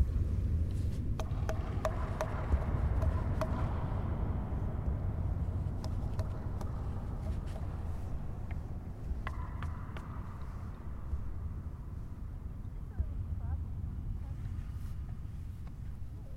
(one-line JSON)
{"title": "Havnevej, Struer, Danmark - at the door of the oil tank", "date": "2022-09-29 17:00:00", "description": "At the door of the oil tank, recorder head towards the inside of the tank, trying little impacts, vocalises etc. hear as well a conversation and speaking voices, laughters just outside of the door", "latitude": "56.49", "longitude": "8.61", "altitude": "2", "timezone": "Europe/Copenhagen"}